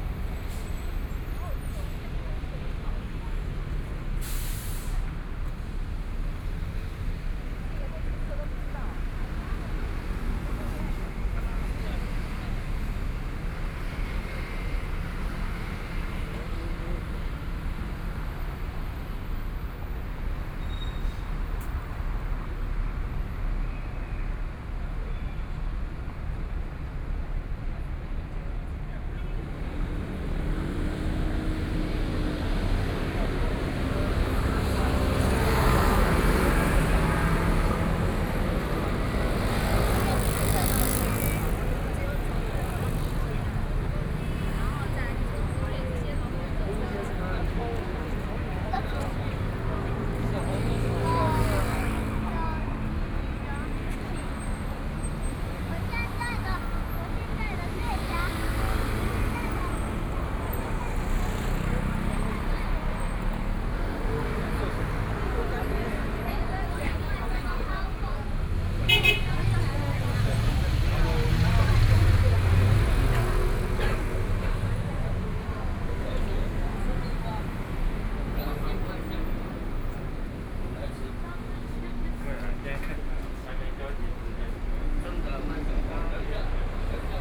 Linsen N. Rd., Taipei City - walking on the road
walking on the road, Through a variety of different shops, Walking towards the south direction
Please turn up the volume a little
Binaural recordings, Sony PCM D100 + Soundman OKM II